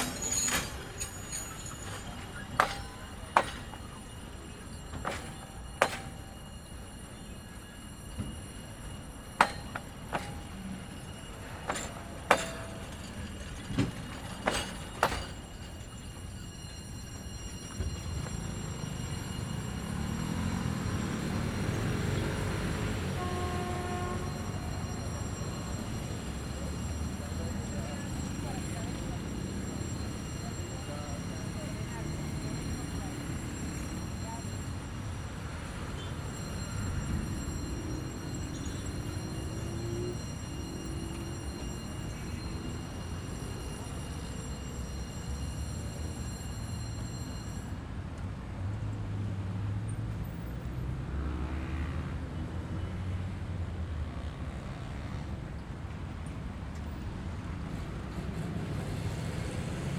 AC 26 - AK 68, Bogotá, Colombia - Tren de la Sabana
Ambiente del paso del tren de la sabana. Grabadora Tascam DR-40 Stereo X\Y por Jose Luis Mantilla Gómez.
3 September 2018, 18:00